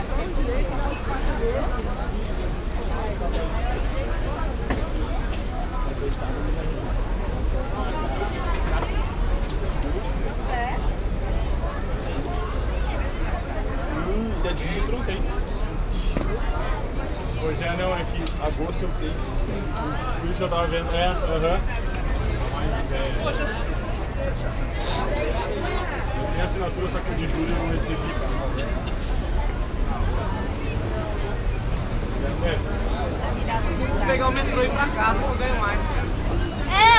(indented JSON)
{
  "title": "Sao Paulo, Praca da Liberdade near subway entrance",
  "latitude": "-23.56",
  "longitude": "-46.64",
  "altitude": "775",
  "timezone": "Europe/Berlin"
}